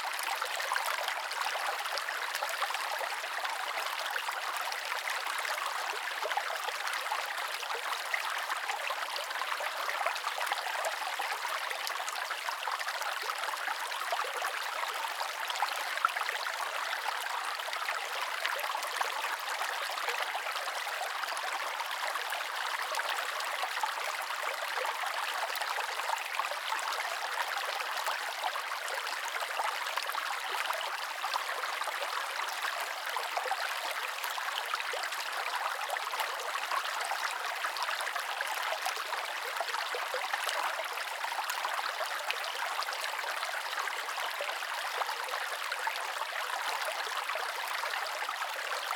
Derrysallagh, Geevagh, Co. Sligo, Ireland - Babbling Stream
Recorded in the middle of a calm sunny day. Zoom H1 positioned as close to the surface of the stream as possible.
Connacht, Ireland, 19 June 2019